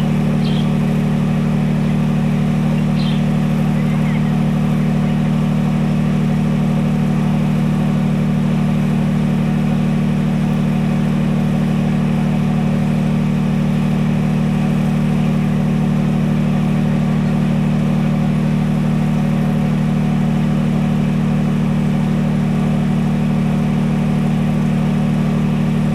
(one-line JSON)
{"title": "Tusimpe dorm, Binga, Zimbabwe - a quiet morning...", "date": "2016-11-17 06:25:00", "description": "… starting from September, we were having electricity cuts three times a week for a full day… and if there were storms somewhere, the cuts may be more or longer… (apparently, the wooden poles in the area were replaced to prepare for the rainy season; that’s what we were told…)\nwhen I heard the sounds of the wheelbarrow (bringing a car battery), I knew what was coming next… and what would accompany us for the “rest” of the day. Here, and at the office (given, there was fuel…)...", "latitude": "-17.63", "longitude": "27.33", "altitude": "605", "timezone": "GMT+1"}